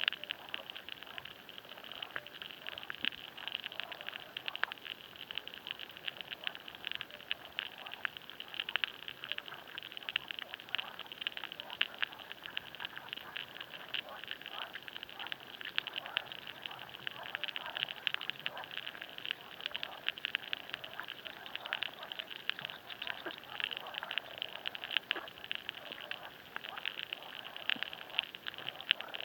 Pilveliai, Lithuania, toadpoles feeding
Hydrophone in the pond with toadpoles
Utenos apskritis, Lietuva, 5 June 2021